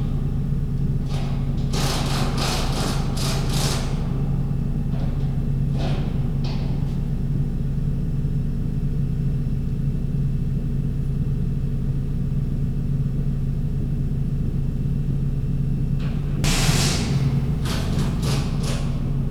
The Sounds of an Anglo-Saxon Cemetery, Sutton Hoo, Suffolk, UK - Sutton Hoo
The National Trust is building a tower on this cemetery.
MixPre 3 with 2 x Rode NT5s